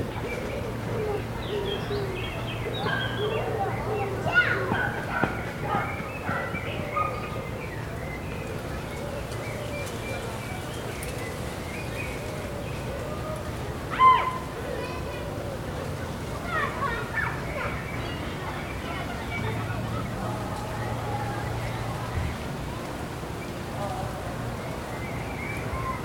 Rue du Dix Avril, Toulouse, France - Jolimont 03
ambience Parc
Captation : ZOOMH4n
France métropolitaine, France